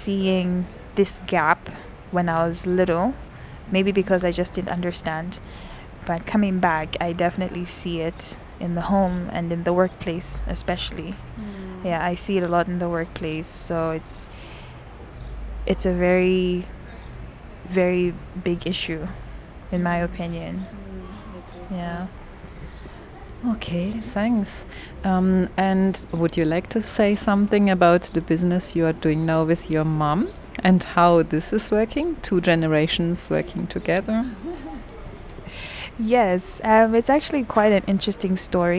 I met with Grace Kubikisha to interview her about participating in and contributing to the WikiWomenZambia project. here’s the very beginning of our conversation in which Grace pictures for us very eloquently aspects of life for women in urban Zambia... Grace herself is now partnering with her mum in business after studying and working abroad for quite a number of years…
the entire interview with Grace Kubikisha can be found here:
Lusaka Province, Zambia, 7 December, 15:30